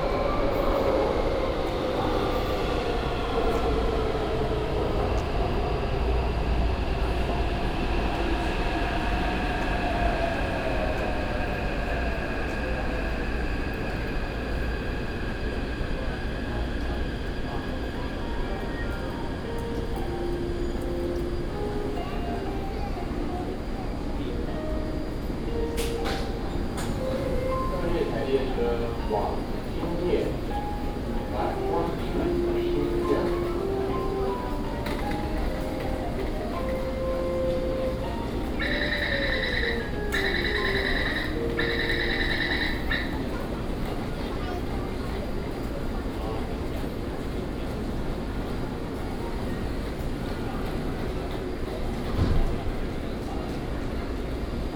公館站, Taipei City - walk into the MRT station
Traffic Sound, walk into the MRT station
Da’an District, Taipei City, Taiwan, 4 March 2016, 18:11